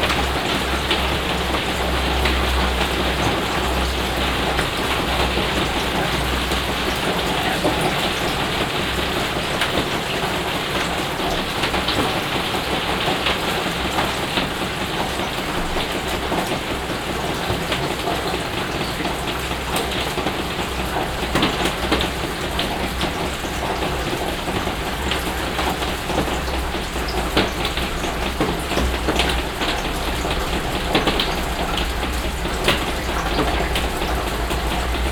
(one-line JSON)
{"title": "Bickendorf, Köln, Deutschland - cologne, backyard factory hall, april rain", "date": "2012-04-20 15:30:00", "description": "Inside a factory hall. The sound of different states of rain pouring on the roof top. Some high heel boots steps on the concrete floor.\nsoundmap d - social ambiences and topographic field recordings", "latitude": "50.96", "longitude": "6.89", "altitude": "49", "timezone": "Europe/Berlin"}